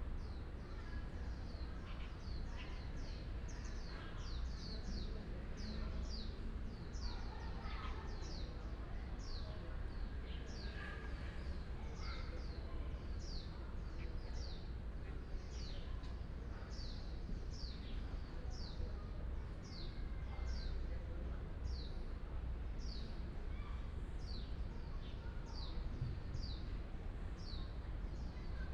大庄國小, Xiangshan Dist., Hsinchu City - Next to the Primary School
Next to Primary School, The sound of birds, Binaural recordings, Sony PCM D100+ Soundman OKM II
Xiangshan District, Hsinchu City, Taiwan, 15 September 2017, 13:38